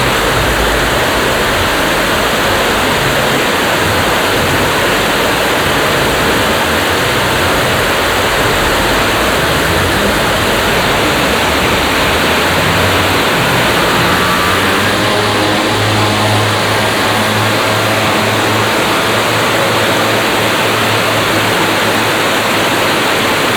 Schiltach, Deutschland - Schiltach, Schiltach stream, small dam
At the stream Schiltach near a small dam. The sound of the water crossing the dam and in the distance some traffic on the main road.
soundmap d - social ambiences, water sounds and topographic feld recordings